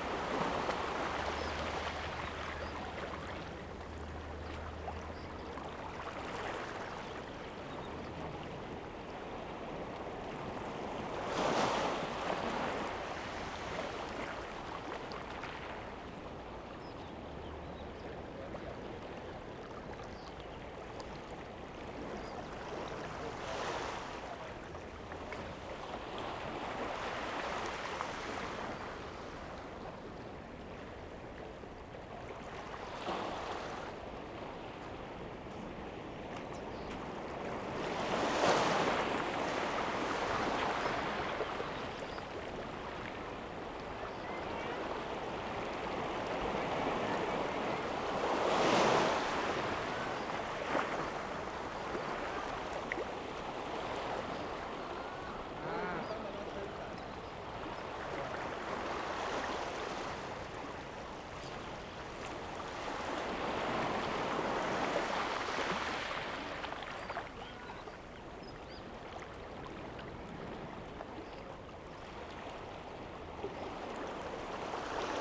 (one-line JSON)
{"title": "石雨傘漁港, Chenggong Township - Small fishing port", "date": "2014-09-08 11:01:00", "description": "Small fishing port, Birdsong, Sound of the waves\nZoom H2n MS +XY", "latitude": "23.18", "longitude": "121.40", "altitude": "5", "timezone": "Asia/Taipei"}